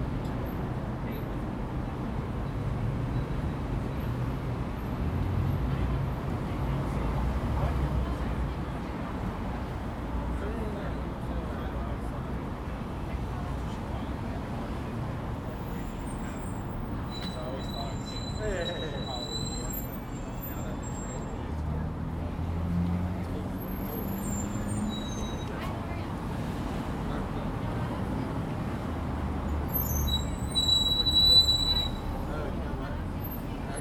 Santa Monica Boulevard, West Hollywood, Street Cafe around noon; Zoom Recorder H2N